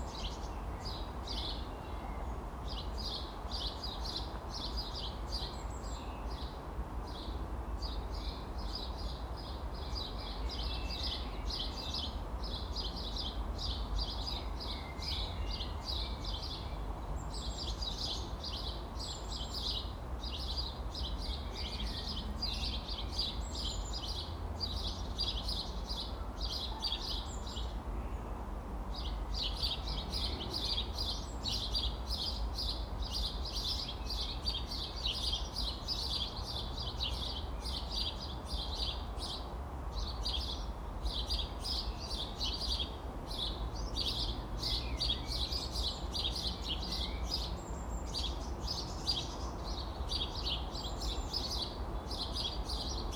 Friedenthal-Park, Berlin, Germany - Beside Werkstaettestrasse 9
Warm weather, Sunday atmosphere along the cobbled road leading to the DB rail yards. Some of these old works house have been renovated, some are derelict. Sparrows chirp, a wood pigeon calls.